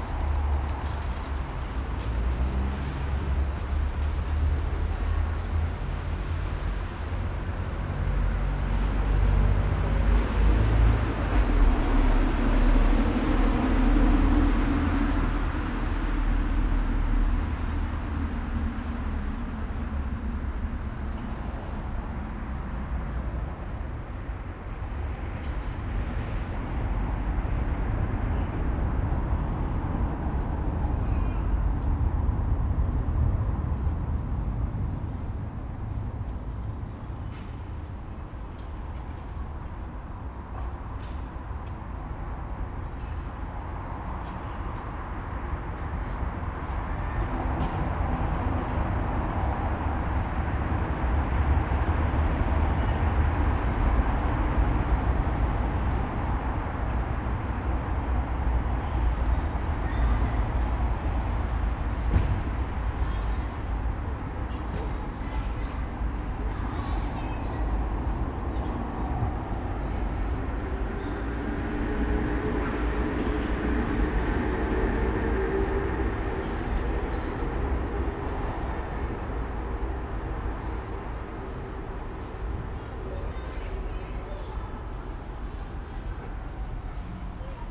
leipzig lindenau, karl-heine-platz, auf der tischtennisplatte.
karl-heine-platz auf einer tischtennis-platte. vater & kind auf dem spielplatz, gitarrenmusik aus dem eckhaus schräg rüber. autos.